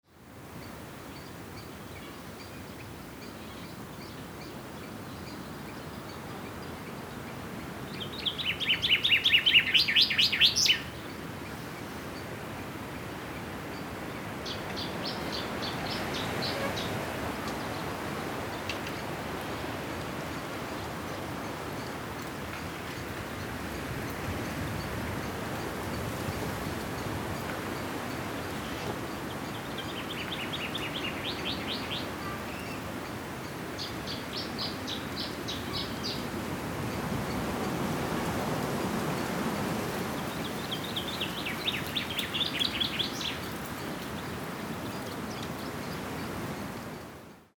dorscheid, sparrows in the bushes
The sound of a group of sparrows that gather and hide in some bushes on a windy summer morning.
Dorscheid, Spatzen in den Büschen
Das Geräusch von einer Gruppe Spatzen, die sich in einigen Büschen an einem windigen Sommermorgen verstecken.
Dorscheid, moineaux dans les arbres
Le bruit d’un groupe de moineaux qui se rassemble et se cache dans des arbres, un matin venteux d’été.